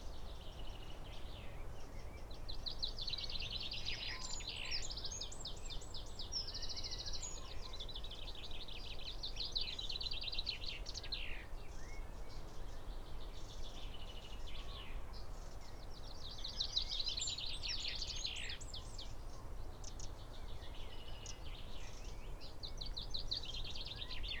Green Ln, Malton, UK - wren soundscape ... loud proud ... occasionally faint ...
wren soundscape ... loud proud ... occasionally faint ... SASS to Zoom H5 ... bird calls ... song ... pheasant ... blackbird ... robin ... buzzard ... red-legged partridge ... tawny owl ... carrion crow ... great tit ... willow warbler ... blackcap ... chaffinch ... coal tit ... dunnock ... blue tit ... SASS wedged in crook of tree ... wren song and calls almost every minute of the soundscape both near and far ...